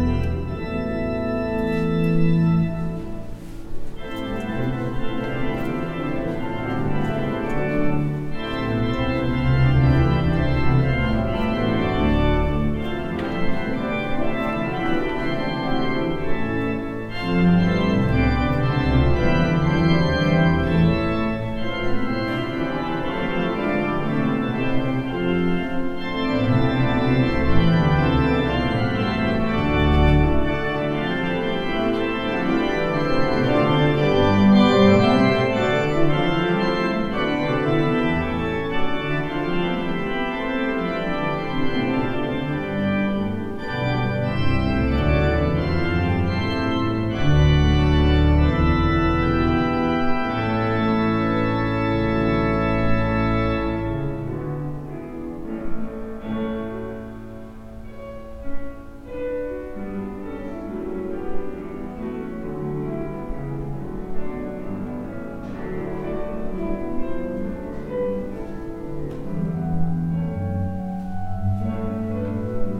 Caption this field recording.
When I was going by the staircase, Ive heard the organs more and more. I was listening unknown song, that suddenly ended and i just noticed tones of piano from next door. You can hear strange compositions and instruments in corridors of HAMU. A lot of artistic words meet each other there in calmness of oasis of the music faculty directly in the centre of hectic turists centre. You have to listen to it carefully from under the windows.